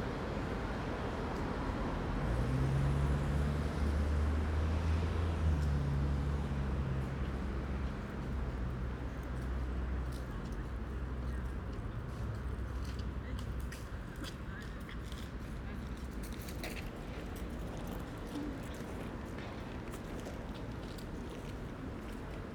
Atmosphere, Karl-Marx-Allee, Berlin, Germany - Karl Marx Allee atmosphere
Karl Marx Allee is the showcase avenue of the DDR with a grand architectural conception meant to display the success of the state and socialist ideas. Today the street is under repair. Traffic lanes have been blocked off by lines of temporary red and white plastic barriers, cycle tracks have been enlarged during covid lockdowns and noticeably less traffic is flowing, passing in groups regulated by nearby traffic lights. It is surprisingly quiet, but the openness allows sound, particularly from Alexanderplatz, to fill the space. Three people sit on a bench under the straight rows of trees. A elderly women with a frame moves past in the leafy shade.
Deutschland, 9 September 2021